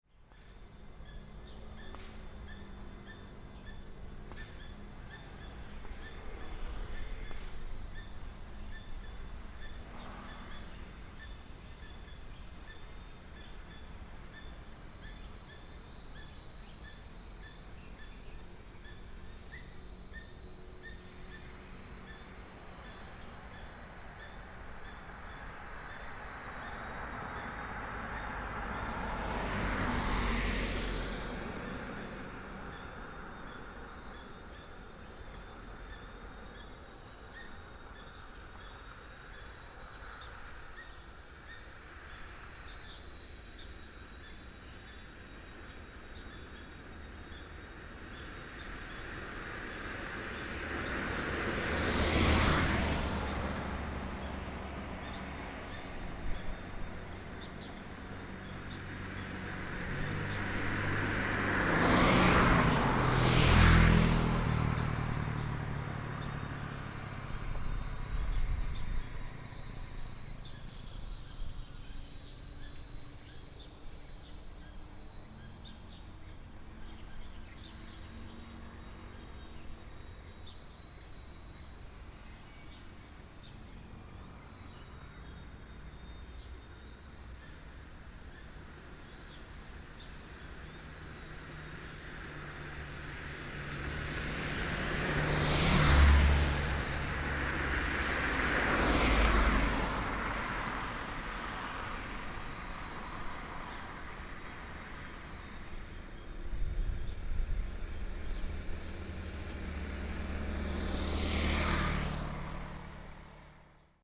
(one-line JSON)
{"title": "南坑口, Baoshan Township - Facing farmland", "date": "2017-09-15 07:18:00", "description": "Facing farmland, Bird call, Traffic sound, Binaural recordings, Sony PCM D100+ Soundman OKM II", "latitude": "24.72", "longitude": "120.96", "altitude": "69", "timezone": "Asia/Taipei"}